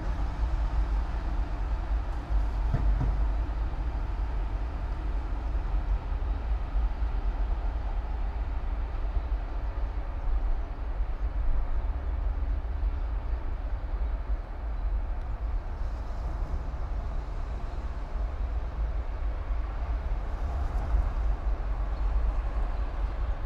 all the mornings of the ... - jan 29 2013 tue